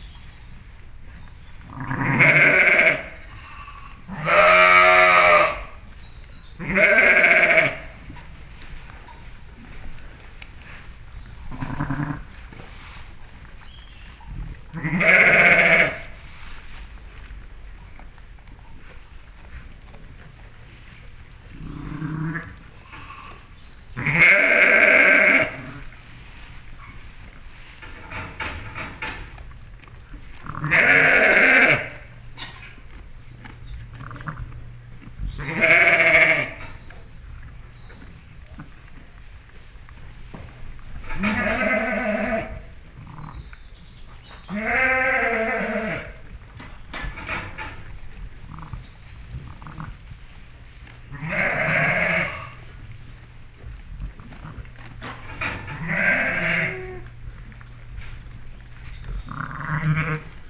New Born Lambs in the lambing shed. - Bredenbury, herefordshire, UK
2 hour old lamb with its mother in the lambing shed with others. Recorded on the floor of the shed very close to the lamb and ewe with a Sound Devices MIx Pre 3 and 2 Beyer lavaliers.
England, United Kingdom, 6 July 2019